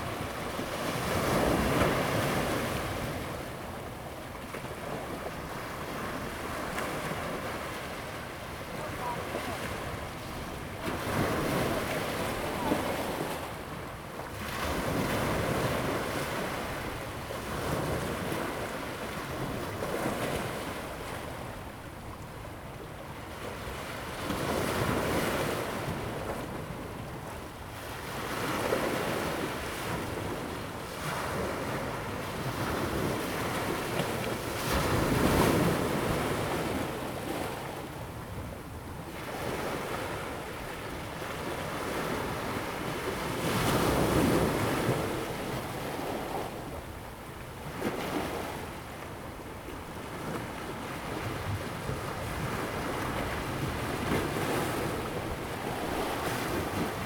觀海路一段, Xinwu Dist., Taoyuan City - sound of the waves
in the beach, Seawater high tide time, sound of the waves
Zoom H2n MS+XY